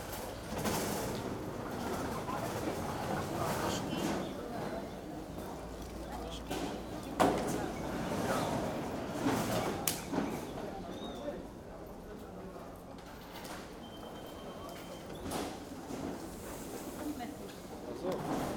koeln airport, security check - examination
security check and deep inspection.